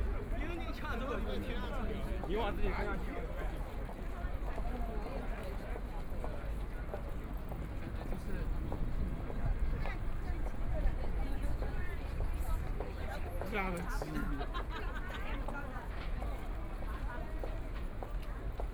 Lujiazui Finance and Trade Zone - Footsteps
Footsteps, Very large number of tourists to and from after, Binaural recording, Zoom H6+ Soundman OKM II
21 November 2013, ~13:00